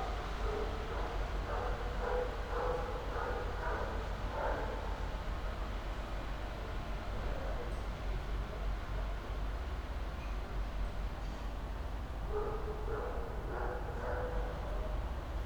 backyard continued: a dog barks (never heard before), someone locks a bike, night ambience
(Sony PCM D50)